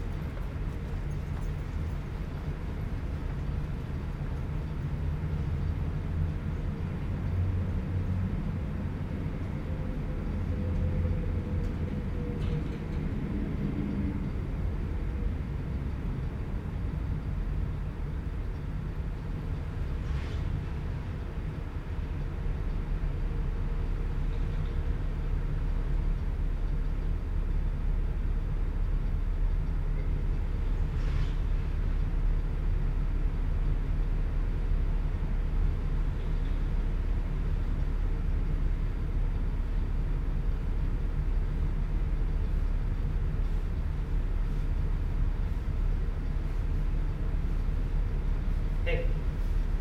sound of the bridge on the +15 walkway Calgary
Alberta, Canada